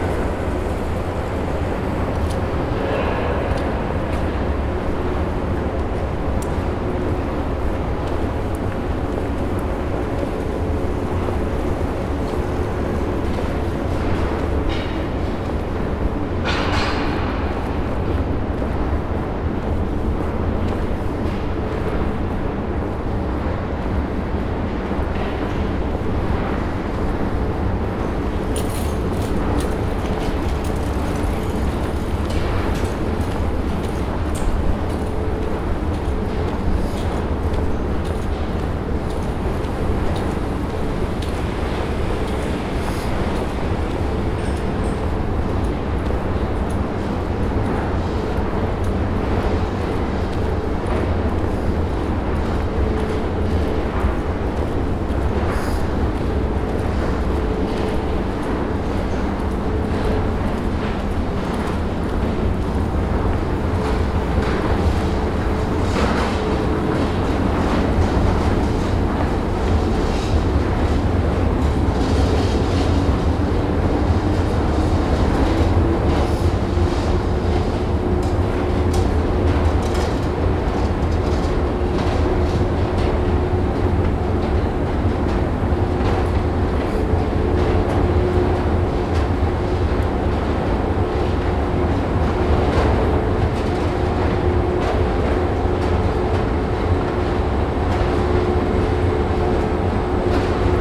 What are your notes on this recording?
recorded and created by Benjamin Vinck with a Tascam recorder